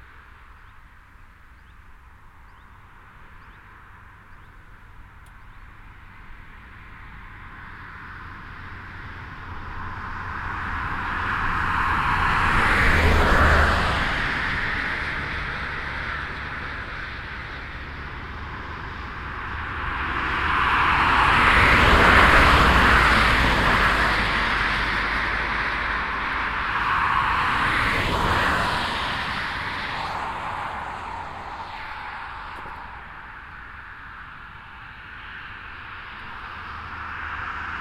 lipperscheid, E421, traffic
At the regional highway E421 on a Saturday evening. The sound of different kind of cars and a motorbike passing by in both directions of the street.
Lipperscheid, E421, Verkehr
Auf der Regionalstraße E421 an einem Samstagabend. Verschiedene Geräusche von Autos und ein Motorrad, die in beide Richtungen der Straße fahren.
Lipperscheid, E421, trafic
Sur la route régionale E421, un samedi soir. Différents bruits d’automobiles et une moto qui passent sur la route dans les deux sens.
Project - Klangraum Our - topographic field recordings, sound objects and social ambiences
Luxembourg, 3 August 2011